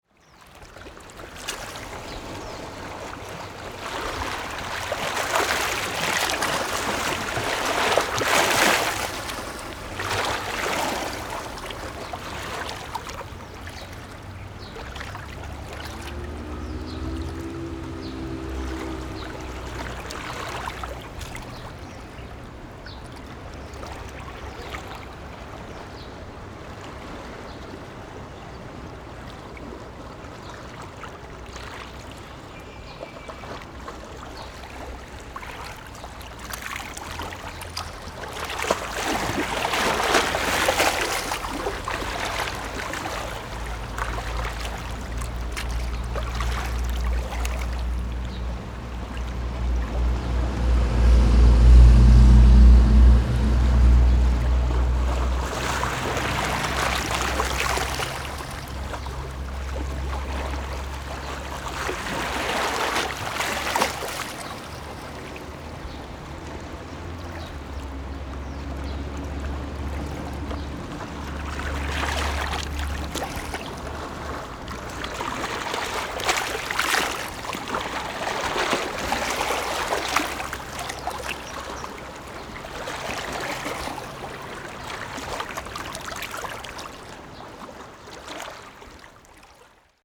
尖鹿里, Shimen District - Water sound
Traffic Sound, Water sound
Zoom H4n